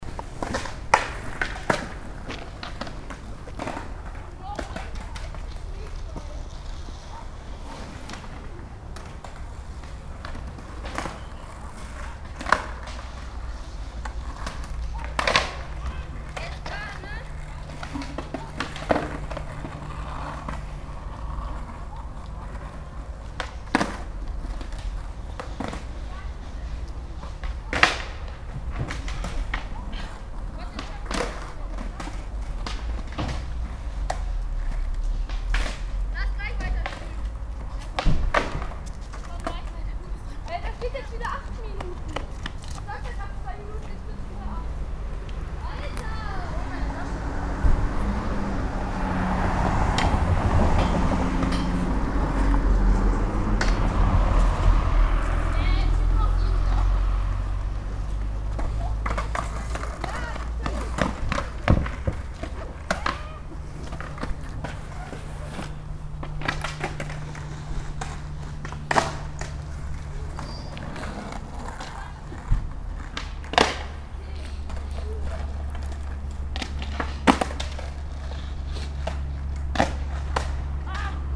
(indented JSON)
{
  "title": "refrath, vuerfels, bahnuebergang - refrath, vürfles, skater, tram and closing of the gates",
  "description": "skater on the street, a tram arrives, the dates close, the tram passes by\nsoundmap nrw - social ambiences and topographic field recordings",
  "latitude": "50.95",
  "longitude": "7.11",
  "altitude": "69",
  "timezone": "Europe/Berlin"
}